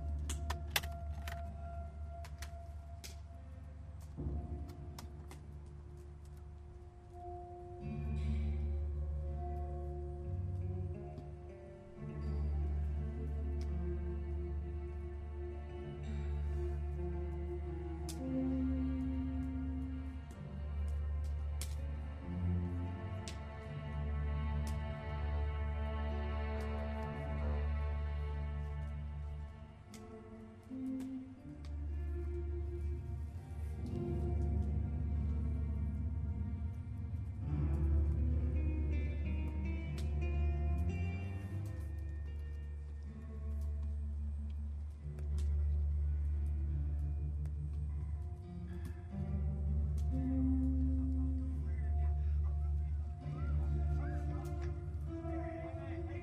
Camp Exodus - Balz is playing the tapes at the Camp Exodus
camp exodus is a performative architecture, a temporary laboratory, an informative space station in the format of a garden plot.
orientated on the modular architectures and "flying buildings", the camp exodus compasses five stations in which information can be gathered, researched, reflected on and reproduced in an individual way. the camp archive thus serves as a source for utopian ideas, alternative living concepts, visions and dreams.
Balz Isler (Tapemusician) was invited to experiment with Gordon Müllenbach (Writer).
Berlin, Germany, 15 August 2009